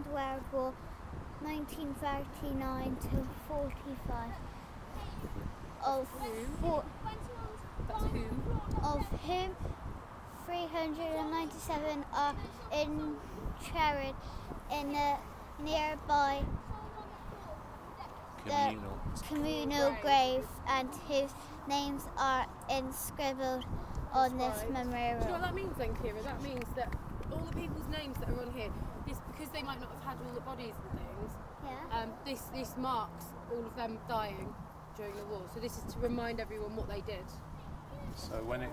Efford Walk Two: Reading from the memorial - Reading from the memorial